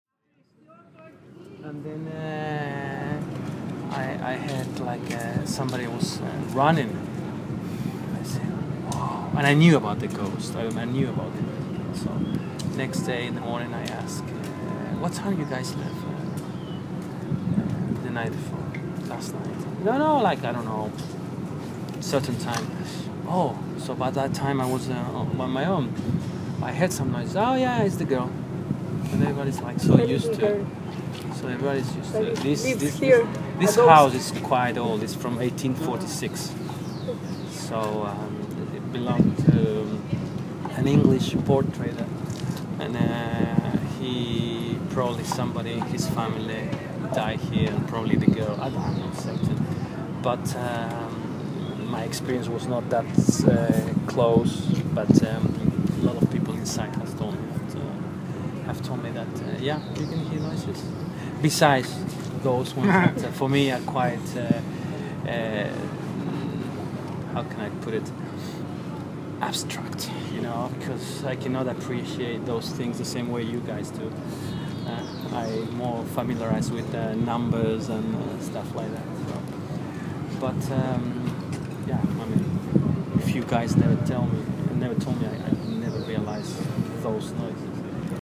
Monte Alegre, Valparaíso, Chile - ghost
restaurant owner talking about hearing ghost
Región de Valparaíso, Chile, 3 December 2014, ~15:00